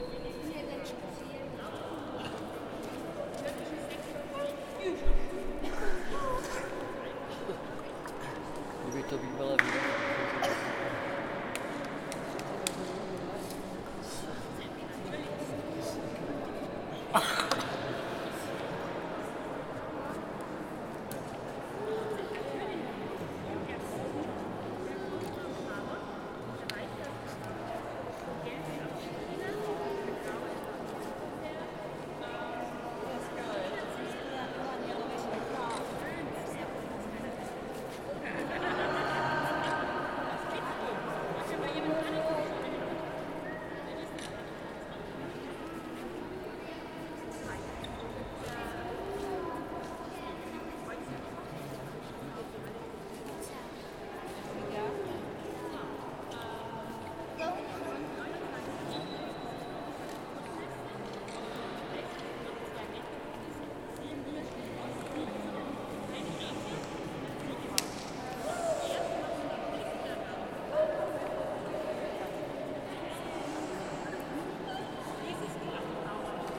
Befreiungshallestraße, Kelheim, Deutschland - In der Befreiungshalle
Innenaufnahme. Starker Hall. Stimmengewirr.